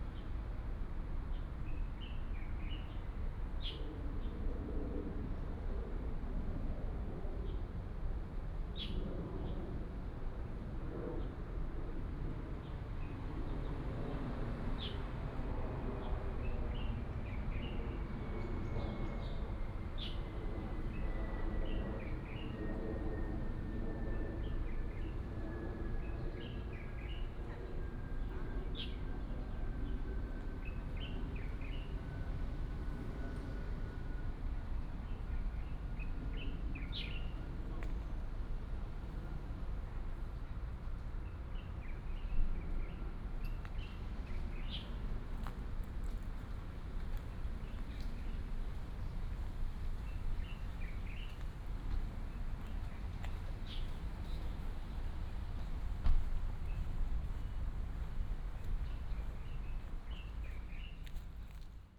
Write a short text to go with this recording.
Dog sounds, Traffic sound, sound of the birds, The plane flew through